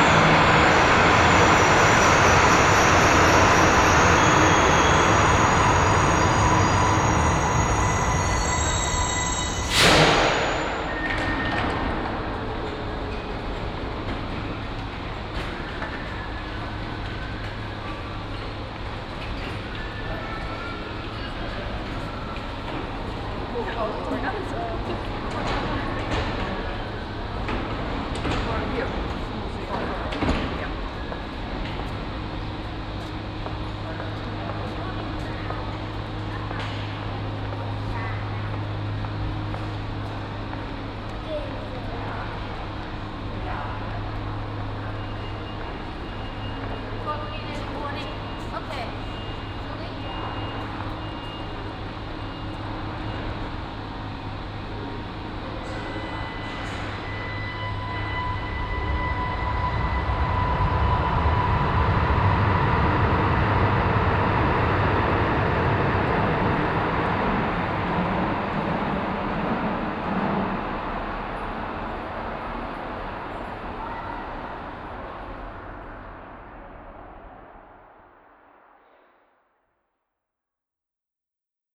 Rüttenscheid, Essen, Deutschland - essen, rüttenscheider stern, subway station
In der U-Bahn station Rüttenscheider Stern. Der Klang einer ein- und ausfahrenden U-Bahn auf beiden Gleisseiten.
In the subway station Rüttenscheider Stern. The sound of subways driving in and out the station on both sides of the tracks.
Projekt - Stadtklang//: Hörorte - topographic field recordings and social ambiences